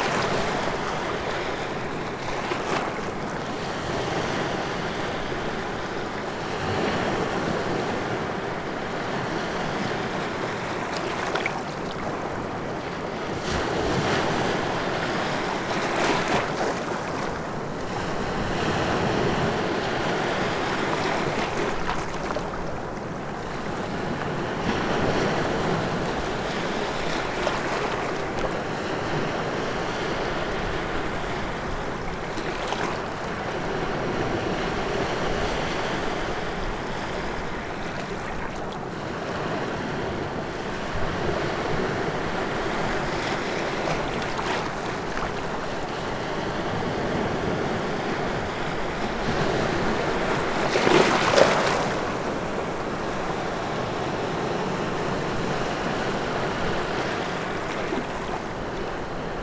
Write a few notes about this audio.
the furthest point between Baltic sea and Riga's bay